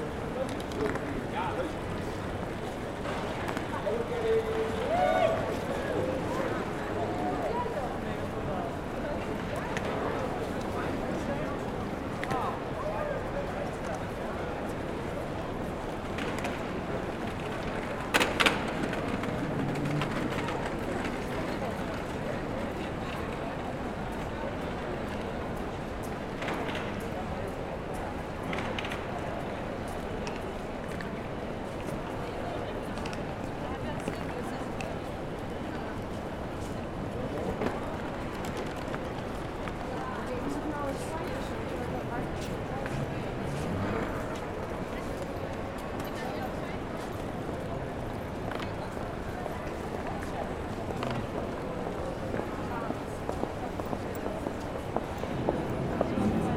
Stationshal, Utrecht, Niederlande - utrecht main station atmosphere 2019
Atmosphere in the main station hall of Utrecht. Steps, suitcases, voices, anouncements of the international train to Düsseldorf.
Recorded with DR-44WL.